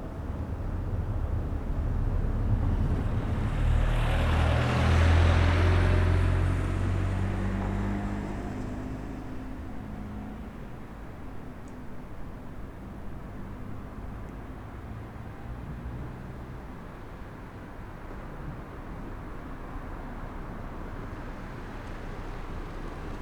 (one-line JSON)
{"title": "Berlin: Vermessungspunkt Friedelstraße / Maybachufer - Klangvermessung Kreuzkölln ::: 12.01.2012 ::: 01:17", "date": "2012-01-12 01:17:00", "latitude": "52.49", "longitude": "13.43", "altitude": "39", "timezone": "Europe/Berlin"}